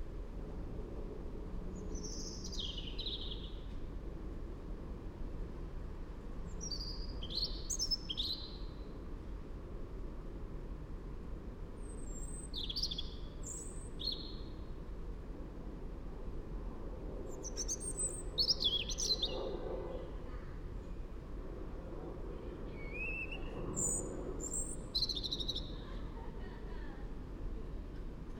Millbank, Westminster, London, UK - A Robin in a tree.
I just acquired a parabolic reflector and wanted to try recording this Robin I hear everyday at work. It sits in the same tree without fail, every morning and evening. (and sometimes all day) Recorded into mixpre6 with Mikro-Usi
19 February 2019